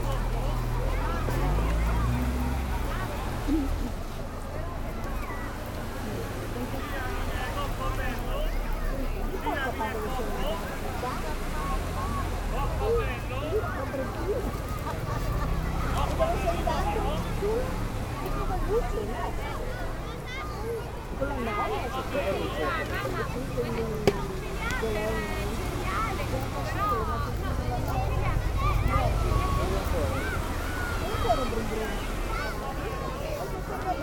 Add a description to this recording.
early evening at the beach promenade, traffic passing by, people stroll along with flip flops a dog barking continously, soundmap international: social ambiences/ listen to the people in & outdoor topographic field recordings